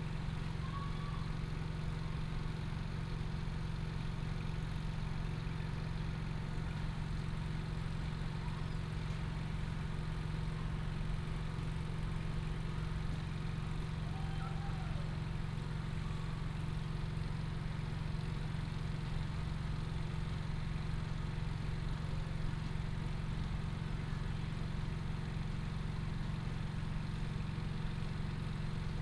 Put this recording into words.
Village entrance, Nearby train tracks, After the train passes, Pumps, School children's voice, Bird cry